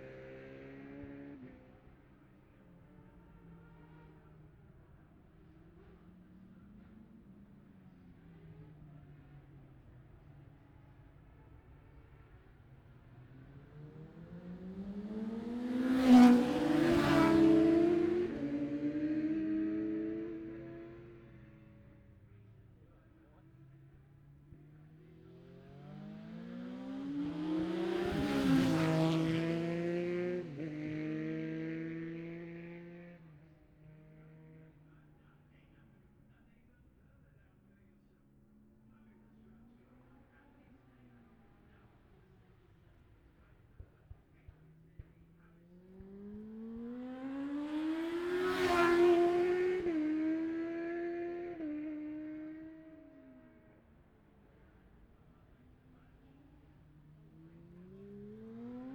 bob smith spring cup ... classic superbikes qualifying ... luhd pm-01 mics to zoom h5 ...
Scarborough, UK, 22 May 2021